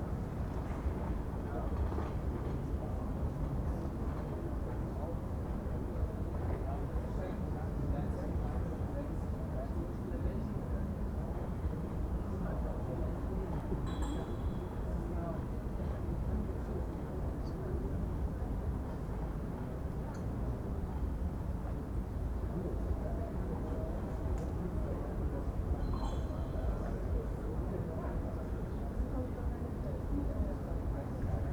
berlin, john-foster-dulles-allee: haus der kulturen der welt, restaurantterrasse - the city, the country & me: terrace of the restaurant at house of the cultures of the world
terrace of the restaurant at house of the cultures of the world short before closing time
the city, the country & me: august 5, 2011